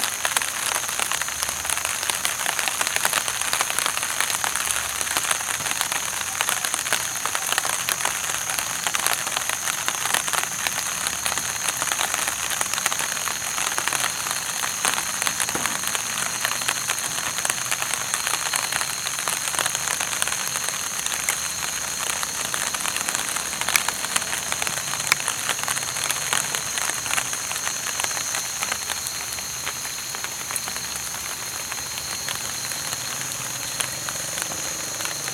Hawkesworth Bridge, Macal River Park, Joseph Andrew Dr, San Ignacio, Belize - Cicadas in the rain
Cicadas during rainy season in San Ignacio, Belize
7 January 2016, ~10:00